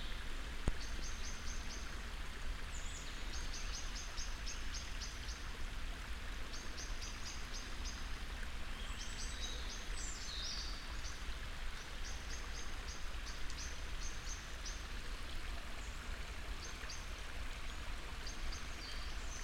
dale, Piramida, Slovenia - spring waters
spring sounds ... stream, distant carbide firing, birds
Vzhodna Slovenija, Slovenija, March 2013